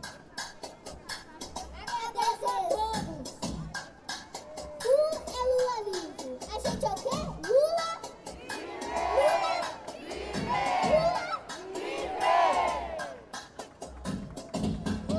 Av. Paulista - Bela Vista, São Paulo - SP, 01310-300, Brasil - Show de crianças (Mc Maqueen e Mc Cafezinho) durante a manifestação Lula Livre
Gravação de campo da paisagem sonora do show de crianças (Mc Maqueen e Mc Cafezinho) durante a manifestação intitulada "Ato da Jornada Lula Livre". Feito com o gravador Tascam DR40, em um ambiente aberto, não controlável, com dezenas de pessoas.